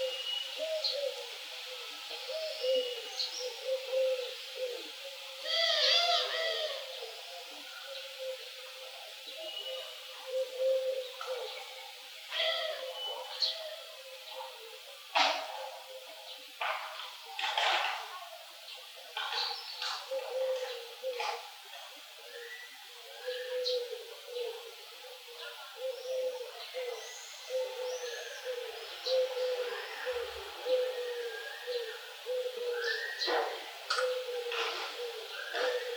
вулиця Трудова, Костянтинівка, Донецька область, Украина - тайный разговор
Шум ветра, воркование голубей и голоса двух собеседниц
Звук: Zoom H2n и Boya 1000l